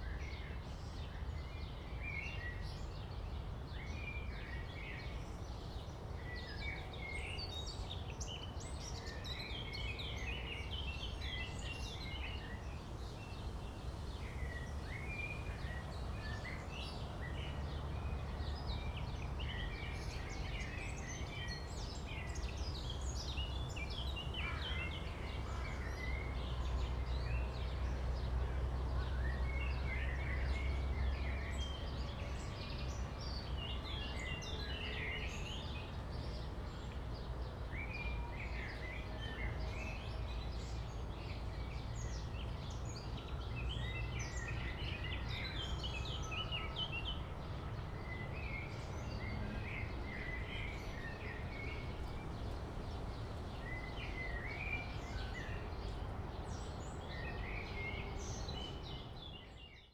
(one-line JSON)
{"title": "TP Rauenberg - solstice, sunrise", "date": "2010-06-21 04:43:00", "description": "The Rauenberg primary triangulation point is the starting point for the calculation of geographic coordinates of the Prussian main triangular mesh spatial reference system (Hauptdreiecksnetz). As the origin, it defines the location and orientation of the modern German triangular mesh spatial reference system in relation to the reference surface of a chosen Bessel ellipsoid.\nLatitude and longitude (location) as well as the azimuth (orientation) are derived from astronomical measurements of the years 1853 and 1859.", "latitude": "52.45", "longitude": "13.37", "altitude": "62", "timezone": "Europe/Berlin"}